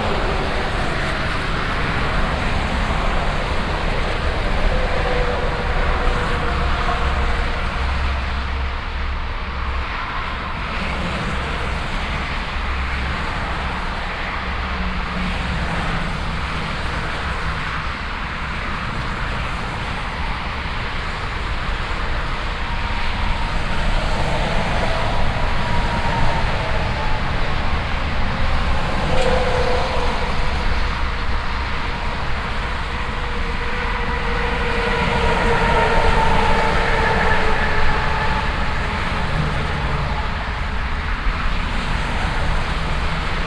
cologne, merheim, traffic on highway a3
soundmap nrw: social ambiences/ listen to the people in & outdoor topographic field recordings
25 June 2009